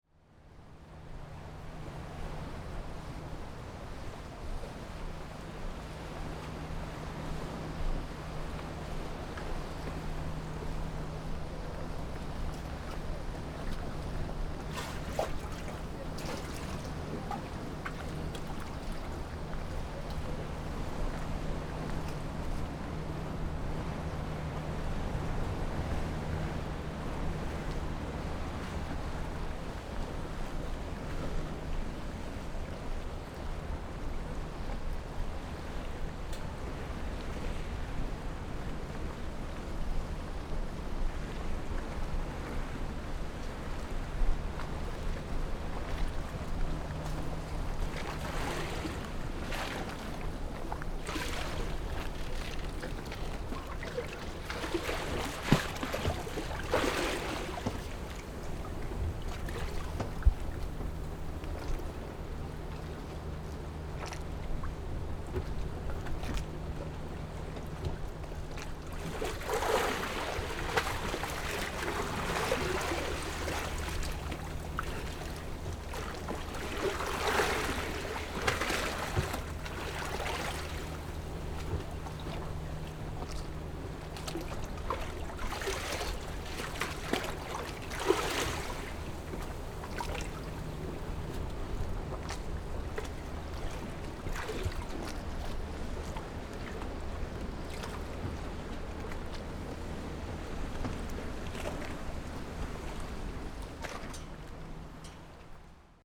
岐頭碼頭, Baisha Township - In the dock
In the dock, Waves and tides
Zoom H6 + Rode NT4